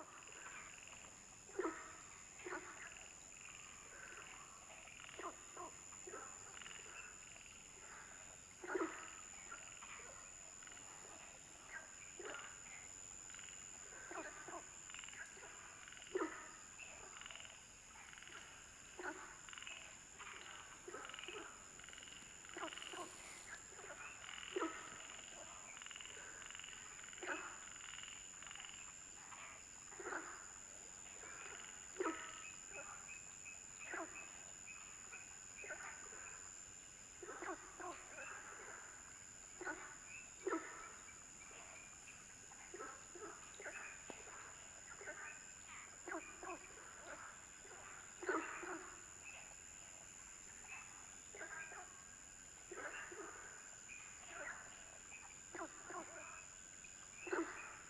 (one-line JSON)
{"title": "Wucheng Village, Yuchi Township, Nantou County - Frogs chirping", "date": "2016-04-19 19:09:00", "description": "Frogs chirping, Firefly habitat area\nZoom H2n MS+XY", "latitude": "23.93", "longitude": "120.90", "altitude": "756", "timezone": "Asia/Taipei"}